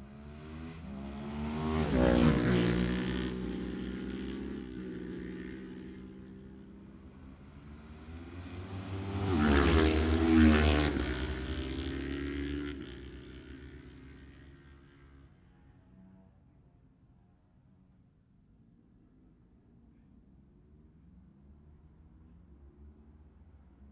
Jacksons Ln, Scarborough, UK - Gold Cup 2020 ...
Gold Cup 2020 ... Twins qualifying ... Memorial Out... dpa 4060s to Zoom H5 ...
11 September 2020